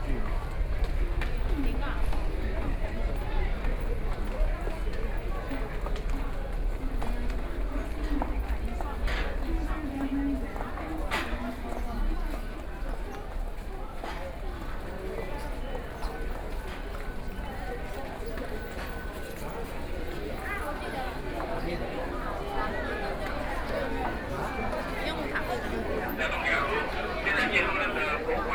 Sun Yat-sen Memorial Hall Station - Enter the Station
Enter the MRT Station, Sony PCM D50 + Soundman OKM II